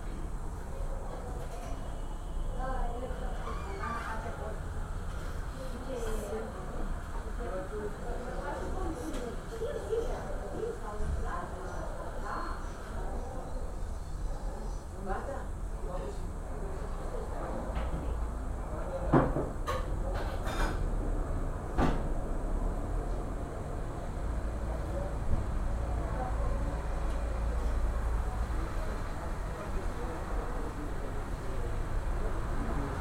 {"title": "Fundatia Parada, Strada Bucur, Sector, Bucharest, Romania - Parada Foundation Courtyard, Bucharest", "date": "2014-09-17 14:15:00", "description": "Parada Foundation is a Romanian NGO created in Bucharest in 1996 by a French clown, Miloud Oukili. It is part of the Federation of NGOs for children’s protection (FONPC) since 2002.\nThe aim of the organisation is to help street children and young people as well as homeless families thanks to various services like integration, social assistance, education and socio-professional integration.\nThis recording was made from the kitchen window of the courtyard at Parada", "latitude": "44.42", "longitude": "26.11", "altitude": "71", "timezone": "Europe/Bucharest"}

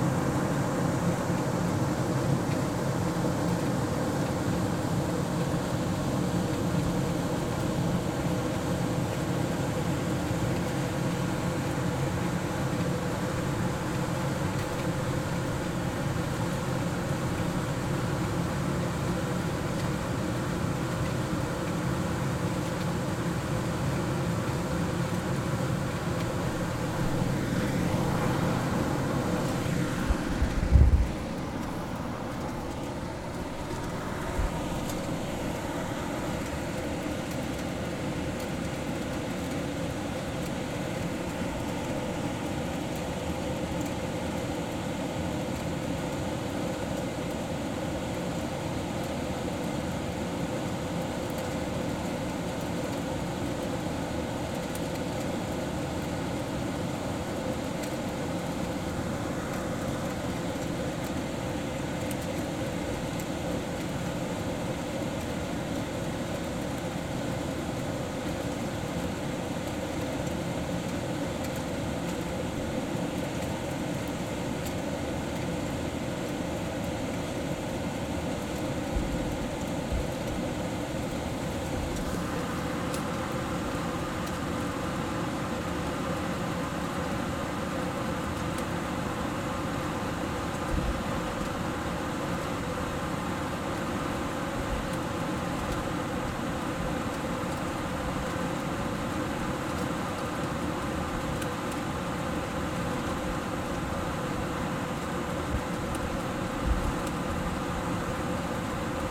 {"title": "Rijswijkseweg, Den Haag, Netherlands - Supermarket Ventilation Fluttering", "date": "2016-03-08 01:15:00", "description": "The plastic slats covering a ventilation exhaust unit flap chaotically. Although shoppers regularly pass by this exhaust unit when they go the supermarket, it seems insignificant. Its continuous white noise, however, forms part of those shoppers daily experience. Captured late at night to avoid excess sound interference, this recording aims to represent the ventilation unit's song in its purity.", "latitude": "52.07", "longitude": "4.33", "altitude": "4", "timezone": "Europe/Amsterdam"}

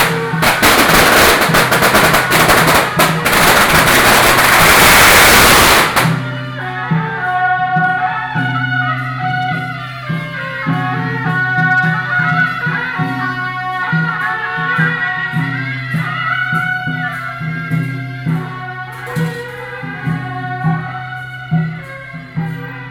{"title": "Lane, Section, Xiyuán Rd, Wanhua District - Traditional temple festivals", "date": "2012-12-04 15:50:00", "latitude": "25.04", "longitude": "121.50", "altitude": "10", "timezone": "Asia/Taipei"}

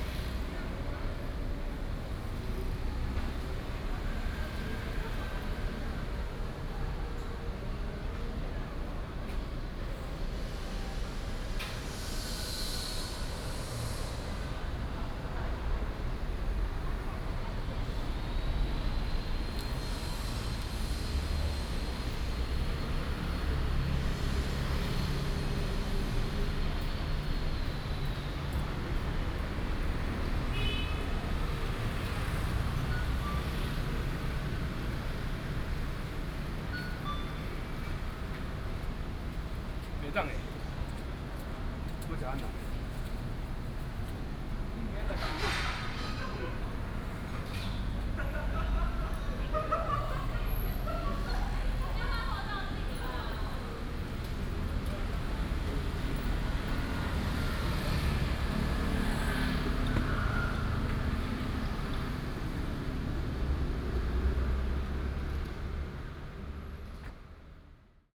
{"title": "Zhongshan Rd., Central Dist., Taichung City - In the corner of the road", "date": "2016-09-06 17:11:00", "description": "In the corner of the road, Traffic Sound, The old mall", "latitude": "24.14", "longitude": "120.68", "altitude": "86", "timezone": "Asia/Taipei"}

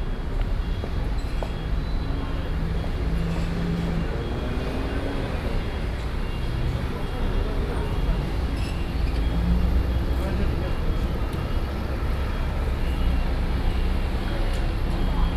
{"title": "Zhongshan Rd., Central Dist., Taichung City - Next to the bus station", "date": "2016-09-06 16:41:00", "description": "Next to the bus station, Traffic Sound", "latitude": "24.14", "longitude": "120.68", "altitude": "86", "timezone": "Asia/Taipei"}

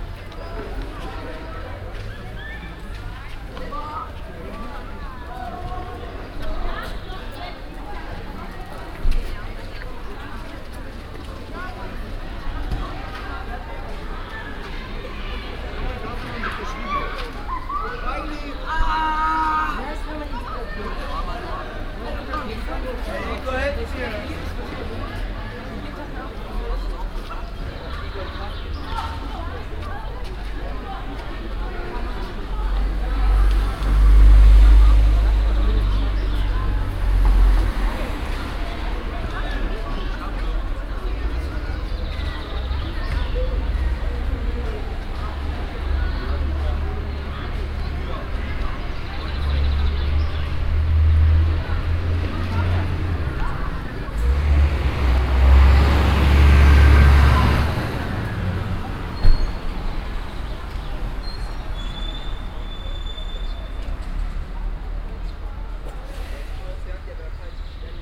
{"title": "cologne, dagobertstr, at catholic elementary school - cologne, dagobertstr, catholic elementary school", "date": "2009-06-19 12:42:00", "description": "break time in the morning, kids playing soccer and joking around, cars passing by\nsoundmap d: social ambiences/ listen to the people - in & outdoor nearfield recordings", "latitude": "50.95", "longitude": "6.96", "altitude": "53", "timezone": "Europe/Berlin"}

